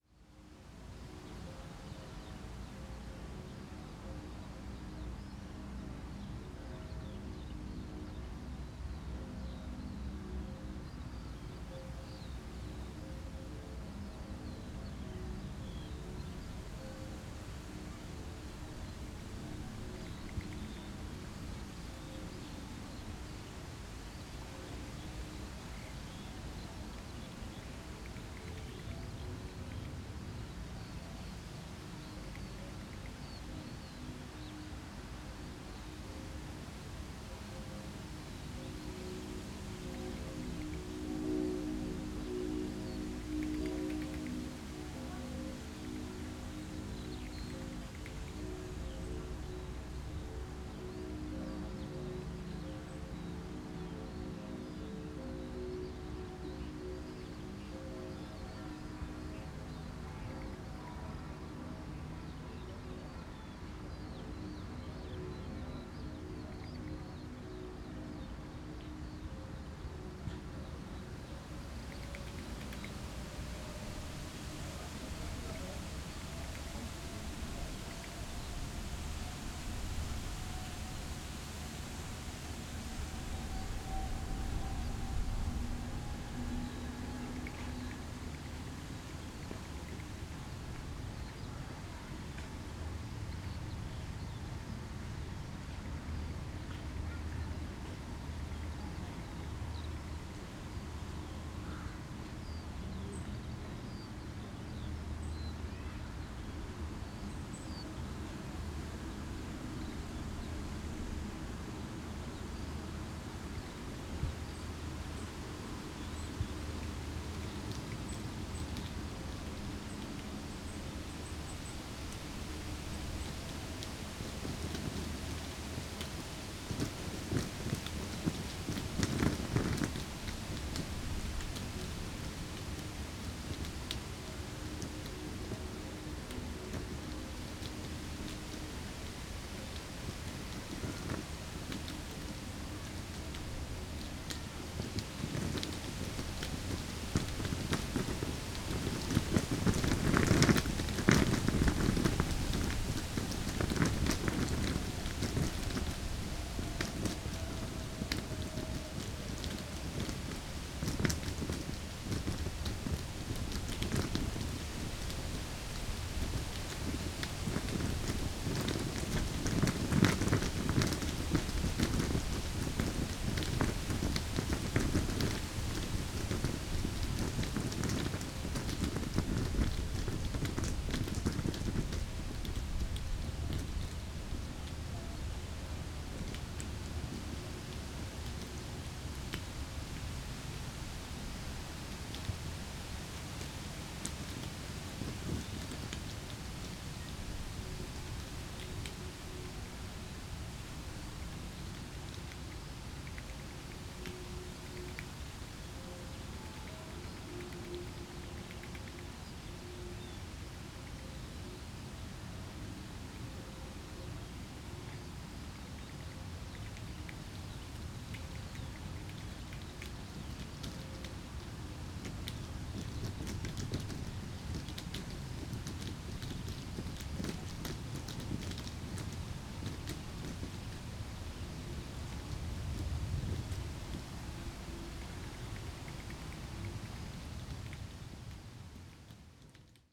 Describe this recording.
distant churchbells, a barrier tape around a small area rattles in the wind. (tech: SD702 2xNT1a)